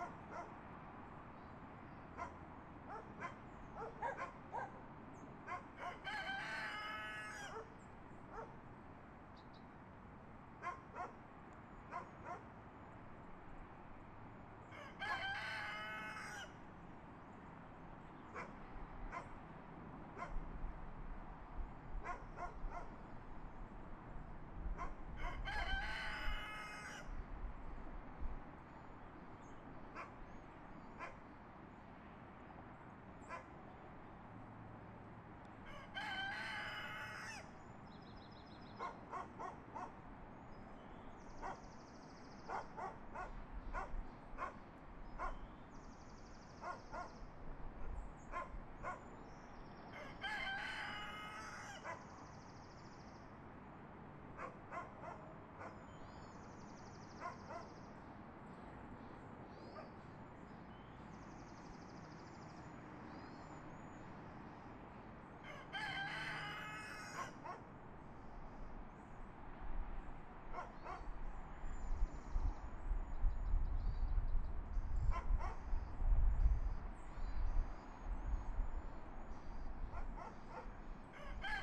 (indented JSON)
{
  "title": "Mountain blvd. Oakland",
  "date": "2010-03-16 04:24:00",
  "description": "Bear, Joey and Little Girl barking for who-knows-what reason. of course rooster Barney needs to be a part of it too",
  "latitude": "37.79",
  "longitude": "-122.18",
  "altitude": "99",
  "timezone": "US/Pacific"
}